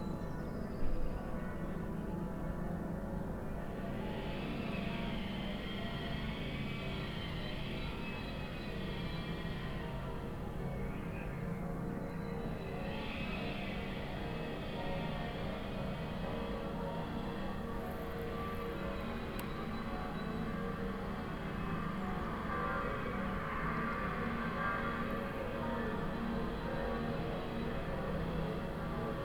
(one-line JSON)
{"title": "Lange Str., Hamm, Germany - national easter bells...", "date": "2020-04-12 09:38:00", "description": "Easter bells of all the churches synchronized under pandemic... (the bell nearby, beyond corona, just happens to be in need of repair… )", "latitude": "51.67", "longitude": "7.80", "altitude": "65", "timezone": "Europe/Berlin"}